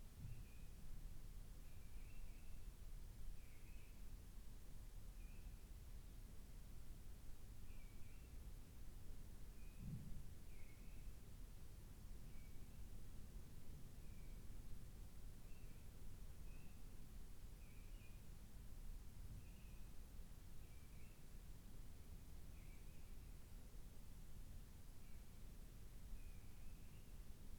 Luttons, UK - inside church ... outside mistle thrush ...
Recording made inside a church of a mistle thrush singing outside ... lavalier mics in a parabolic ... background noise ...
Helperthorpe, Malton, UK